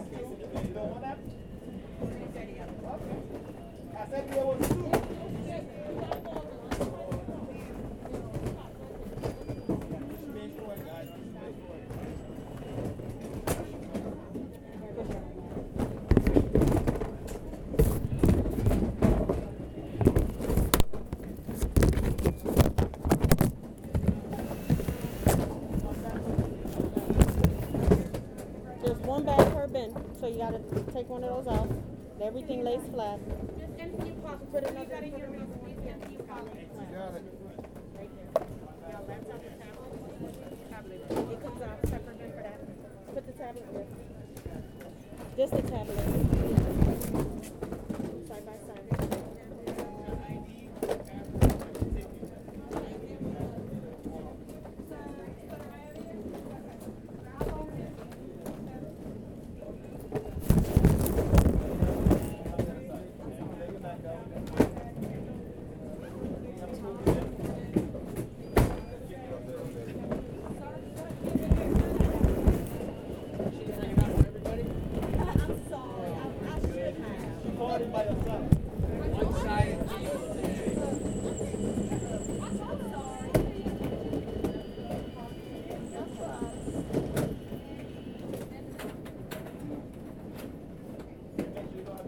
Hartsfield-Jackson Atlanta International Airport, N Terminal Pkwy, Atlanta, GA, USA - At The Security Checkpoint
Arriving at the security checkpoint after waiting in line. This was captured on a Moto G7 Play with the Field Recorder app installed in order to ensure decent audio quality. In this recording, the phone is placed in a bin and brought through the bag checking machine. The acoustics are altered by the placement of the device within the bin. TSA officials and air travelers are heard from all directions.
Fulton County, Georgia, United States, 18 August, 12:26